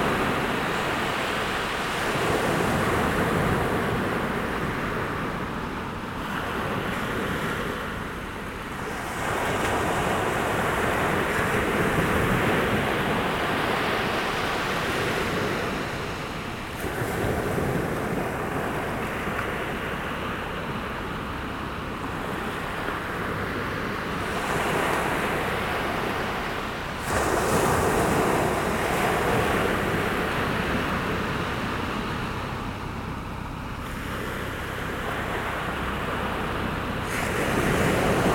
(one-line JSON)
{"title": "Noordwijk, Nederlands - The sea", "date": "2019-03-29 12:00:00", "description": "Noordwijk-Aan-Zee, the sea at Kachelduin.", "latitude": "52.27", "longitude": "4.45", "altitude": "2", "timezone": "Europe/Amsterdam"}